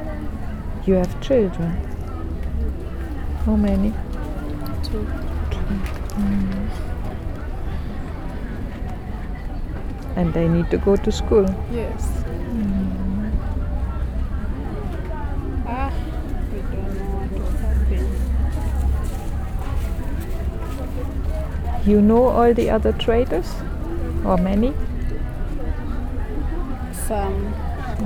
Street Market, Choma, Zambia - Chitenge traders - they dont want us here...
When I returned to Choma in June 2018, after two years, I was surprised seeing merely big building work and no market stalls and traders along the road. Since I had already spent a good ten days in Lusaka, I had seen similar development there, had spoken with traders, and learnt that since outbreak of the Cholera earlier that year, street vending had been forbidden, and market traders mostly not allowed to return to their business (apart only from a very small group of those certified as handicapped). However, a majority of people in the Zambian society rely on this part of the economy for their and their family’s daily survival. A couple of street markets in Zambia had recently gone up in flames; and I came across various rumours of arson. The later may come with little surprise reading below a quote from a local government announcement in Southern Province In Jan.
Southern Province, Zambia, 13 August 2018